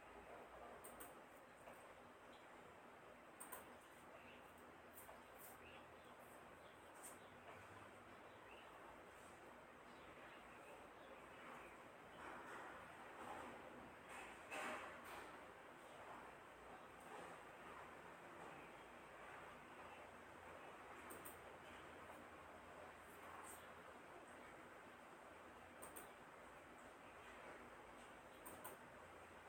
224台灣新北市瑞芳區大埔路錢龍新城 - Silent morning
place:
Where I live with my wife, people here is nice; the main street 大埔路(Da-pu Rd.) have most stores which provide our living, includes post office and 7-11.
But the site I take this recording, which is my rented house, doesn't have any stores in the community, and very, very quiet, that you can heard it from the recording I take.
recording:
Don't have much sounds, except someone is ready to drive and argue with his wife's door slang of the car, or riding on the motorcycle which is popular down here(Taiwan).
situation:
A carless morning, and it's just few people outside go for job or school, most of the people nearby is staying home doing their business, which is sleep taking, house work doing, or net-surfing through the smart phone.